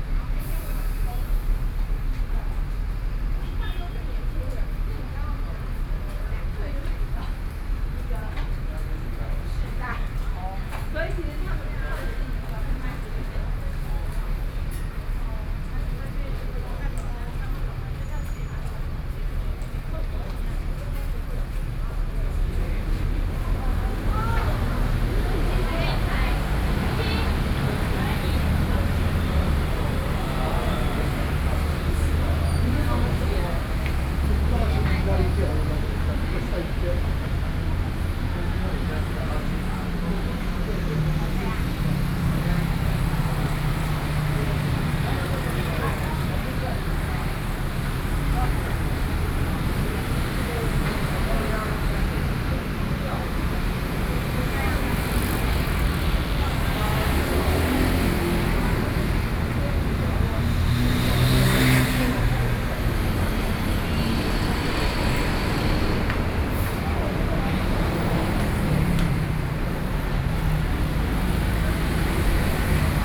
Taipei - Traffic noise
Traffic noise, Sony PCM D50 + Soundman OKM II
Daan District, Taipei City, Taiwan